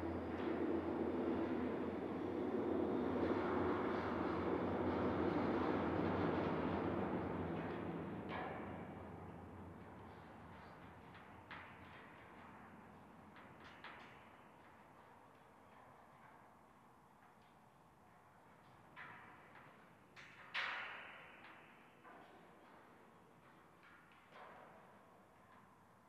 City of Brussels, Belgium - Listening to trains through a metal fence and contact microphones
I attached two contact microphones (both made by Jez Riley French) onto the metal fence at the back of the skateboarding park, in order to listen to the vibrations of trains passing. The metal fence collects many other environmental sounds, so that as you stand and listen to the contact microphones you hear not only the trains but also the atmosphere of the skateboarding park.